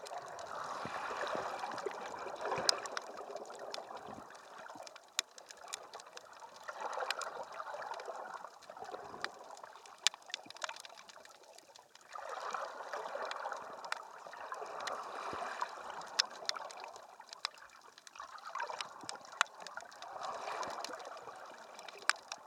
Valle del San Lorenzo, Italien - San Lorenzo al Mare - At the head of the groyne, under water recording with contact mic
Contact mic attached to a bottle, about 1m submerged, throwing pebbles into the water. Mono recording.
[Hi-MD-recorder Sony MZ-NH900, contact mic by Simon Bauer]